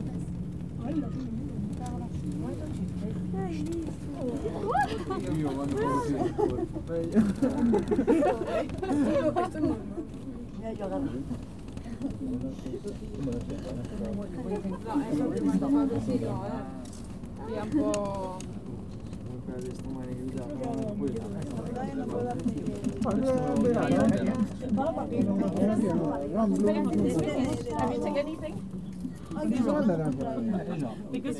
Port Vel, Harbour, Barcelona, Spain - Transbordador Aeri del Port - Barcelona Cable Car
The first half of the cable car journey, from Sant Sebastia tower to Jaume I tower.
You can hear the voices of other passengers, cameras, and the creaking of the cabin. Unfortunately I ran out of memory on my recorder so wasn't able to record the rest of the journey.
Recorded with Zoom H4n